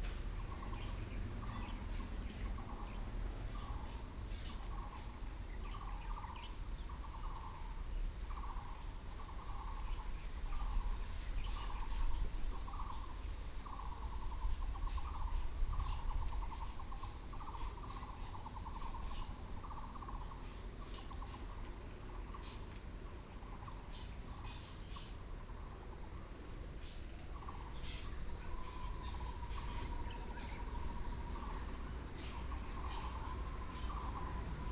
Birds singing, Binaural recordings, Sony PCM D50 + Soundman OKM II